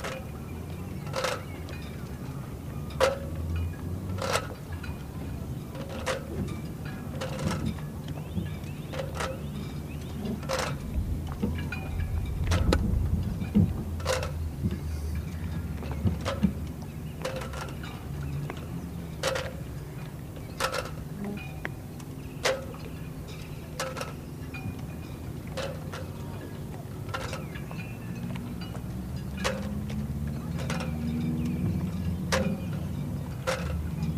Launch drifting in the water
Cornwall, UK